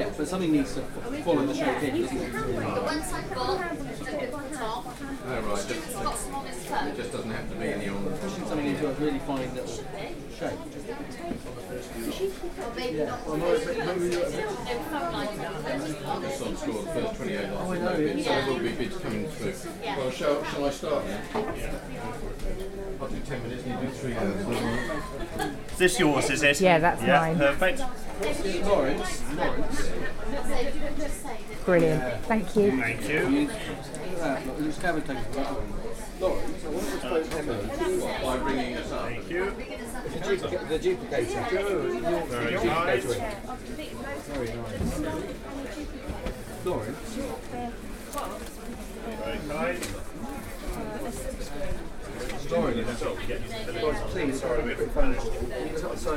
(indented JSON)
{
  "title": "Jacksons of Reading, Jacksons Corner, Reading, UK - Entering the auction at Jackson's of Reading, and attempting to pick up a bidding card",
  "date": "2014-01-04 10:05:00",
  "description": "Jacksons of Reading was a family-owned department store in Reading opened in 1875 by Edward Jackson. The store was kept in the family, and traded goods to the public until December 2013. After its closure, in January 2014, all of the old shop fittings and fixtures were offered up for sale by public auction. This is the sound of me entering the auction from King's Walk, going into the labyrinthine system of rooms; and fighting my way to the offices to pick up my bidding card. You can get some sense of the numbers of people who turned up for the auction, in the level of chatter! Recorded on sound professional binaural microphones, stealthily worn in the crowd to document this momentous, collective experience of huge change and loss in the locality. This was recorded at the start of the auction, and various recordings follow in a sequence, documenting some of the historic moments that occurred while I was there, hoping to secure lots 74 and 75 (which I did not do!)",
  "latitude": "51.46",
  "longitude": "-0.97",
  "altitude": "45",
  "timezone": "Europe/London"
}